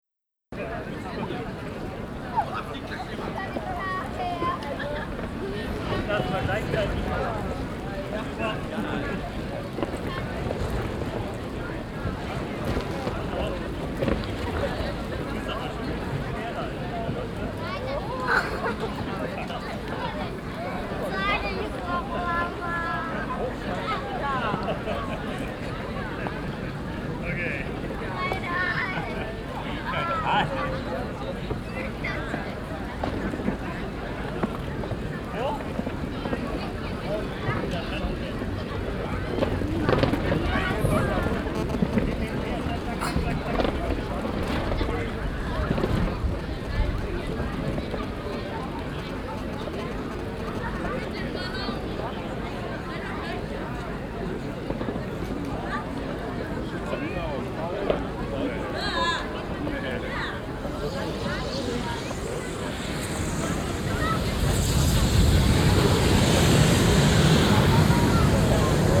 Neuss, Deutschland - museums island hombroich, langen founation, sky event
On the meadow in front of the Langen Foundation during the sky event of Otto Piene. The sound of people walking and talking while the event preparation - long helium filled plastic tubes lifted in the air swinging in the wind and the sound as a new tubes gots filled with helium. In beween distorton signals by mobile phones.
soundmap d - social ambiences, topographic field recordings and art spaces
Neuss, Germany